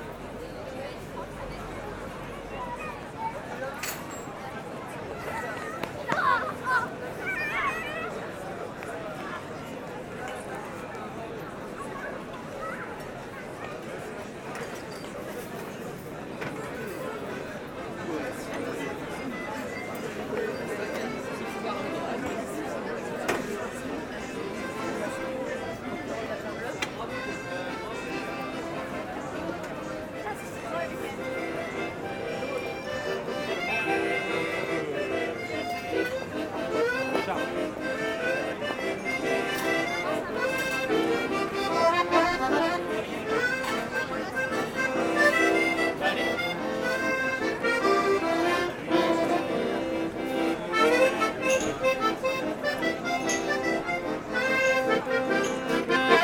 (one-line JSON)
{"title": "Tours, France - Place Plumereau atmosphere", "date": "2017-08-12 21:00:00", "description": "Very noisy ambiance of the place Plumereau, where bars are completely full everywhere. Happy people, happy students, local concert and noisy festive ambience on a saturday evening.", "latitude": "47.39", "longitude": "0.68", "altitude": "59", "timezone": "Europe/Paris"}